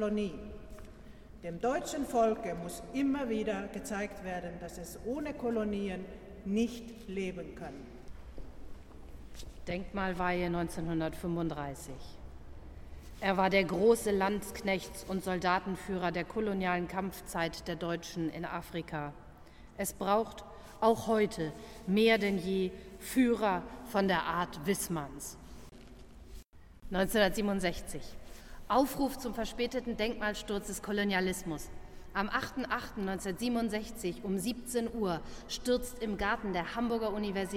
Echos unter der Weltkuppel 04a Wissmann Ostfront